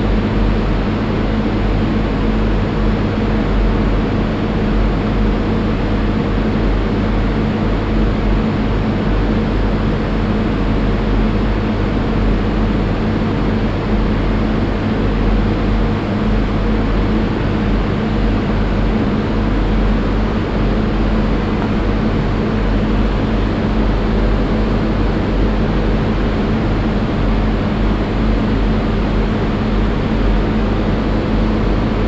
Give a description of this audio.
Inside the empty two floor hall of the discothek Nachtresidenz ( a former old cinema) - The sounds of the refrigerators and ventilaltion reverbing in the big and high brick stone wall place. At the end an ambulance sirene that comes in from the main street outside. This recording is part of the intermedia sound art exhibition project - sonic states, soundmap nrw -topographic field recordings, social ambiences and art places